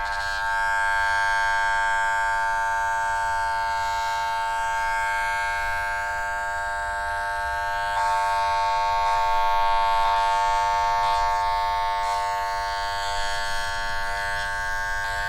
Kaliningrad, Russia, underwater pump
hydrophone in the water in hope to hear some living creatures. all what I heard was some pump working
Kaliningrad, Kaliningradskaya oblast, Russia, 8 June 2019